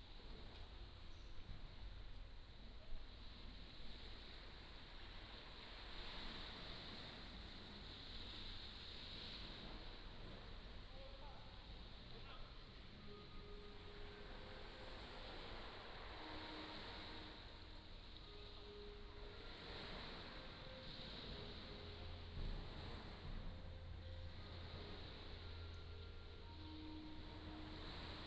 馬祖村, Nangan Township - In front of the temple
Sound of the waves, In front of the temple, Chicken sounds
連江縣, 福建省 (Fujian), Mainland - Taiwan Border, 15 October 2014, 08:18